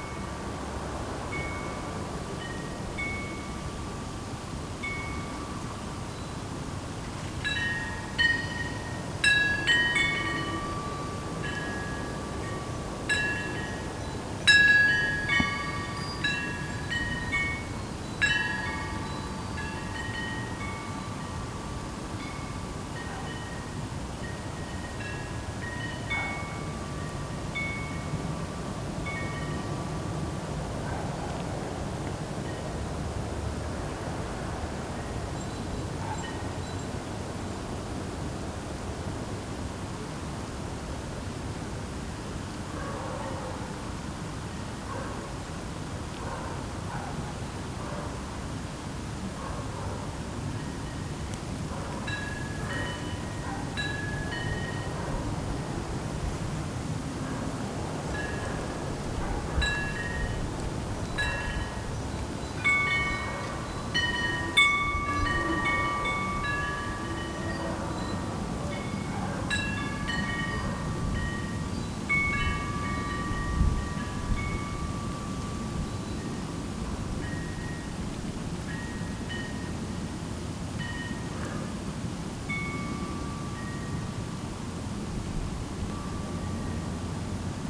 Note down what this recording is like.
A quiet wind chimes, a few time before the new year time. Nobody in streets, everything is quiet.